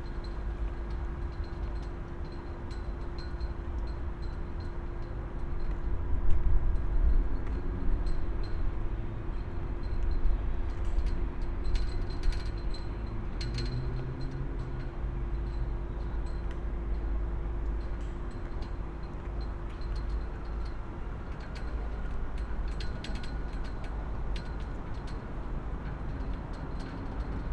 platform above track bed, flags clinking in the wind, trains passing
(tech: Olympus LS5 + Primo EM172 binaural)
Cologne, Germany, 14 May 2012